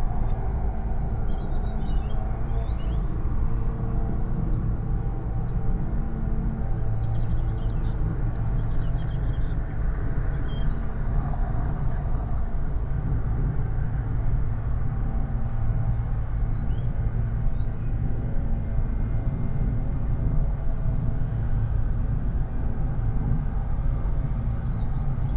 Behoes Ln, Reading, UK - Behoes Lane Meditation
Meditation on Behoes Lane in Woodcote looking out over the Thames and Moulsford with Didcot power station in the distance. The shifting drone of a lawn mower is prominent throughout most of the recording punctuated in the foreground with birds and the scurrying of two rats that were intrigued by my presence. Recorded on a Sound Devices 788T with a pair of Sennheiser 8020s either side of a Jecklin Disk.
15 August 2017, 20:26